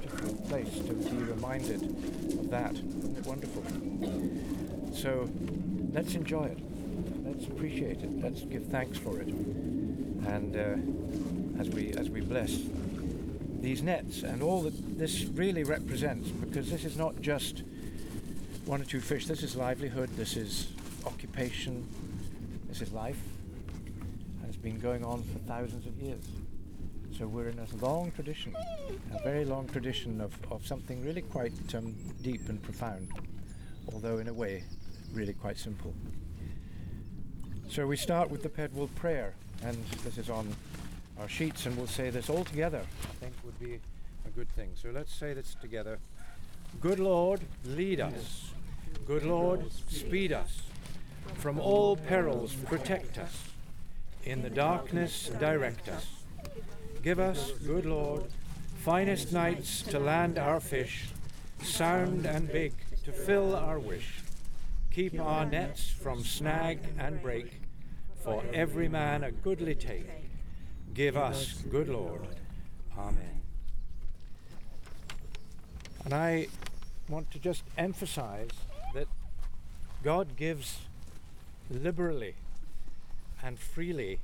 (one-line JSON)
{"title": "Paxton, Scottish Borders, UK - River Sounds - Blessing of the Nets, Paxton", "date": "2013-05-01 18:10:00", "description": "Field recording of the traditional Blessing of the Nets ceremony that took place on the banks of the Tweed at Paxton on May 1st 2013.\nThe Paxton netting station is one of the very few fisheries still operating this traditional net and cobble method of salmon and trout fishing.\nThe first catch that evening was of two large and one smaller sea trout. The first fish is always for the Minister and this was gutted and cleaned on the river side by George Purvis.\nThanks to the Minister Bill Landale, for permitting this recording and to Martha Andrews, Paxton House, for inviting us along.", "latitude": "55.76", "longitude": "-2.10", "timezone": "Europe/London"}